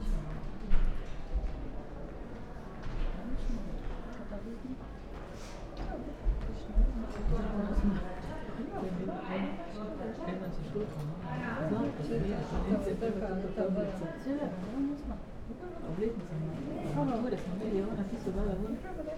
from balcony, second floor, National Theater Maribor - after a show
people leaving old hall, wooden floor, chairs, slapping doors
Maribor, Slovenia, 10 April 2015